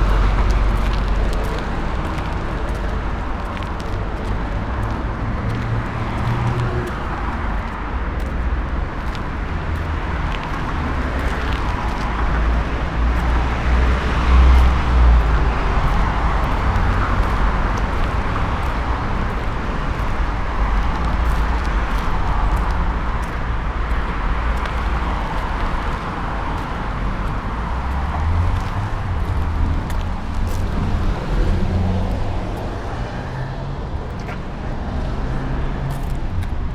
Stralauer Strasse, Berlin - walking
Sonopoetic paths Berlin